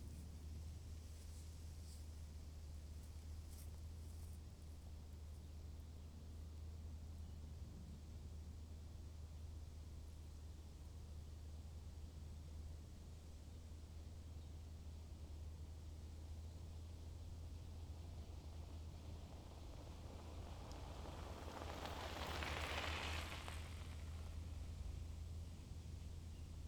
Berlin Wall of Sound, Marienfelde border 080909
Berlin, Germany